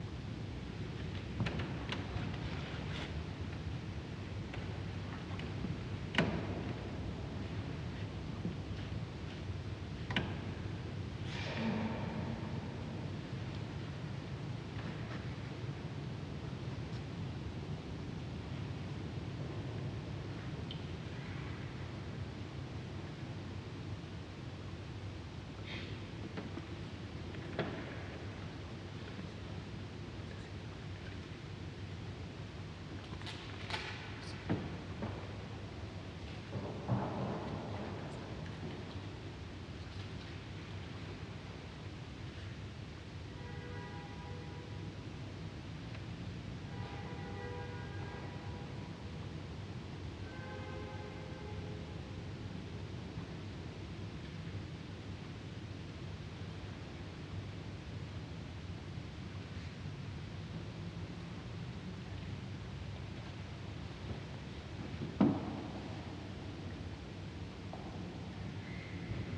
St. Sebastian, Ackerstraße, Berlin, Deutschland - St. Sebastian church, Ackerstraße, Berlin - Waiting for the mass
St. Sebastian church, Ackerstraße, Berlin - Waiting for the mass.
[I used an MD recorder with binaural microphones Soundman OKM II AVPOP A3]
Deutschland, European Union, 10 January 2006, ~17:00